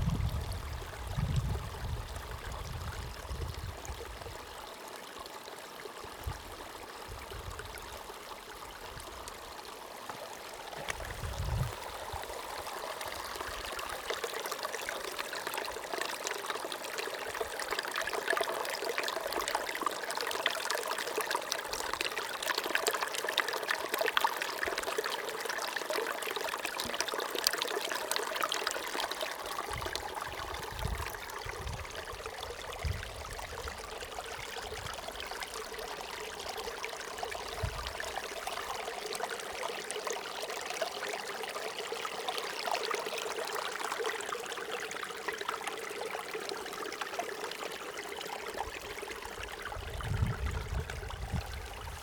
Lacs de Vens, France - Following stream downhill (WLD 2014)
Hi-pass filter used in Audacity to reduce wind noise. Recorded with zoom h1 on World Listening Day 2014)
Unnamed Road, Saint-Étienne-de-Tinée, France, 18 July 2014, ~17:00